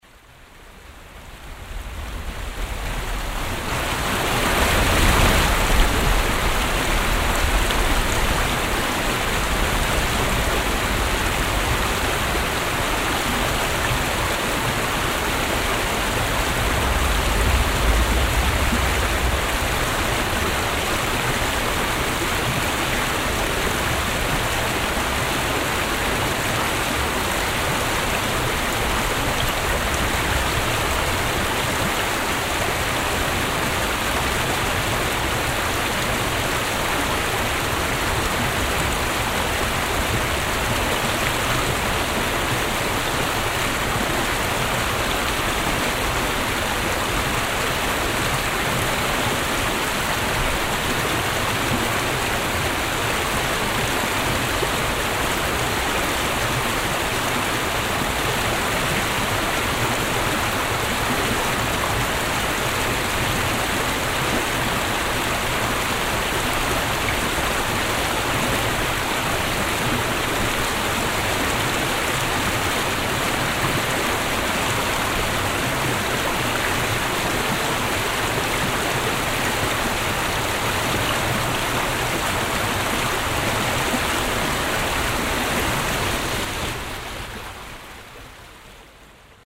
soundmap: mettmann/ nrw
kleine fussgängerbrücke über den lebhaften mühlenbach bevor er in den mühlenteich mündet - stereofield recording märz 07, mittags
project: social ambiences/ - in & outdoor nearfield recordings